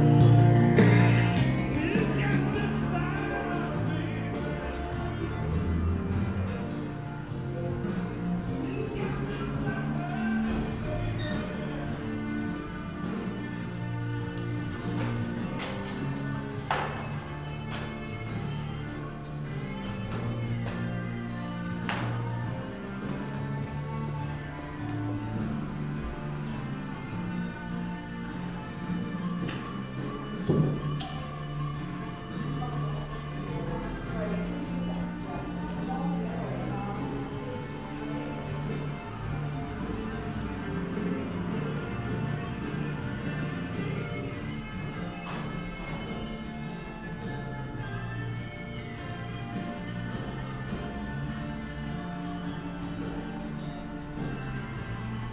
ex casino, Maribor - cafe salon
recorning of a live session with phone to radio aporee
saturday morning, spoken words, leaves of small red notebook